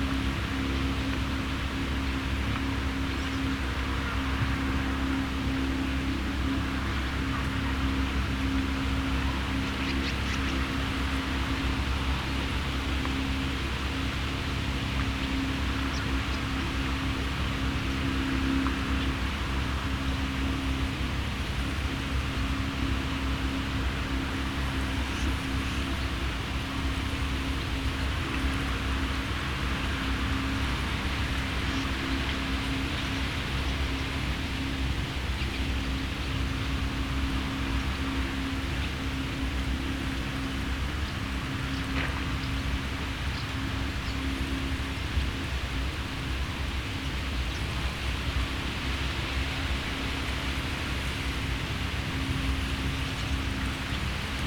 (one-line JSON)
{"title": "Havelberg, Germany - ein motorboot faehrt vorbei", "date": "2016-09-11 16:05:00", "latitude": "52.80", "longitude": "12.20", "altitude": "26", "timezone": "Europe/Berlin"}